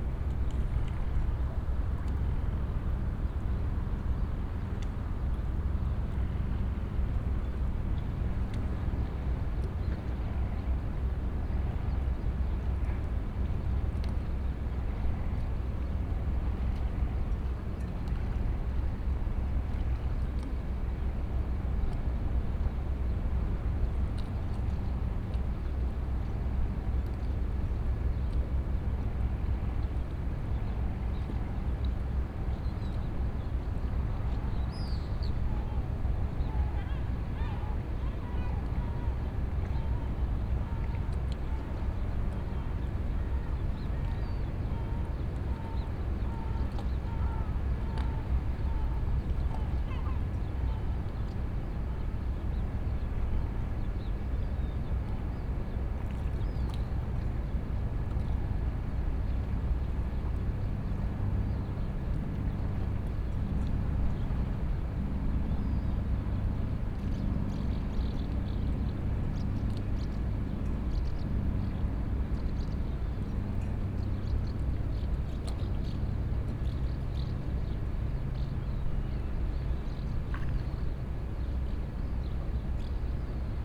Entrada a la dársena de Fuengirola a las 6.45 de la mañana/ entry to the Fuengirola dock at the 6:45 am
Fuengirola, Spain, 18 July 2012